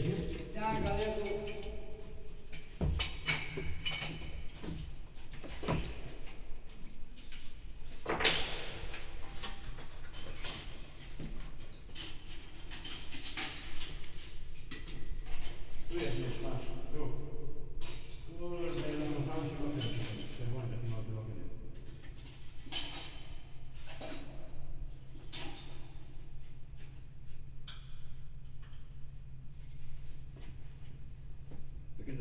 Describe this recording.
two maintenance workers fixing a broken pipe, discussing their work, one of them heard of hearing, not saying much, the other talking a bit gibberish and cursing